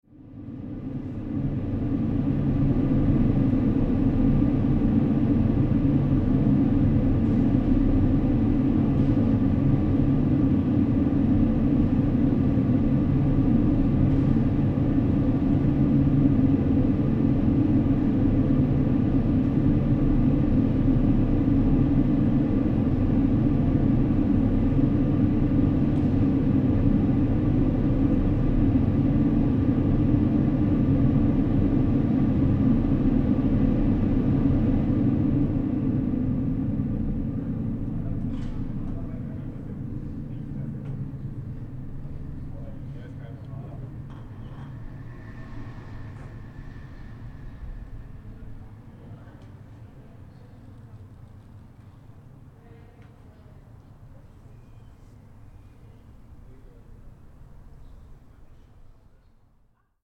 neue maastrichter, backyard - neue maas, abluft / exhaust
22.04.2009 21:00 abluft der tiefgarage, exhaust of the basement garage.